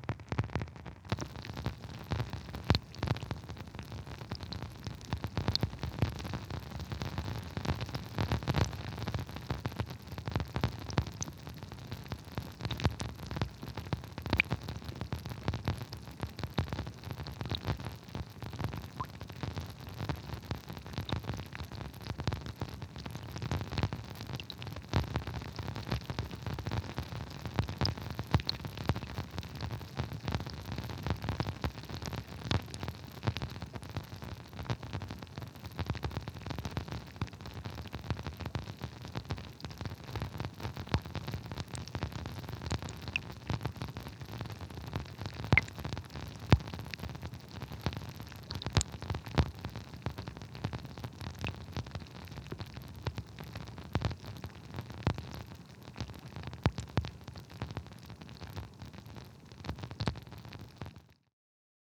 Walking Holme Drips
Drips landing in the river ofrom a pipe stickjing out the wall